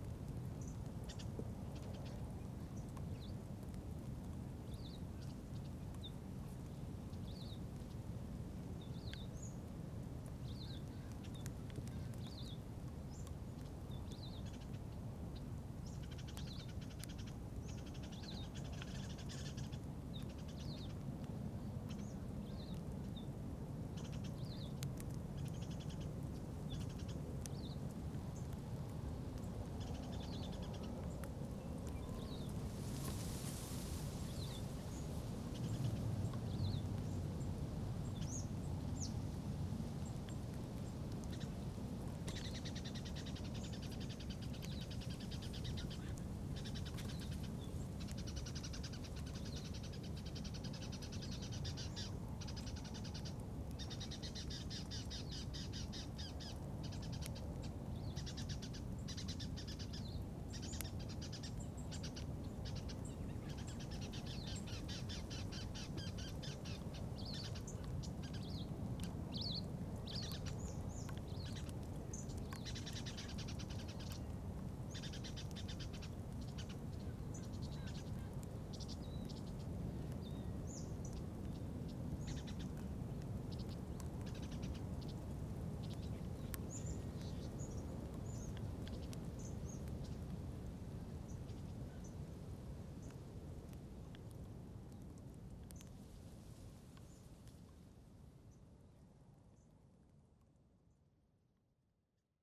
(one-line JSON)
{"title": "Kamay Botany Bay National Park, Kurnell, NSW, Australia - light rain in the afternoon", "date": "2013-06-01 15:00:00", "description": "recorded in kamay botany bay national park on the 1st day of winter. not far from where captain cook landed in 1770, where the gweagal people used to live.\nolympus ls-5.", "latitude": "-34.04", "longitude": "151.21", "altitude": "20", "timezone": "Australia/Sydney"}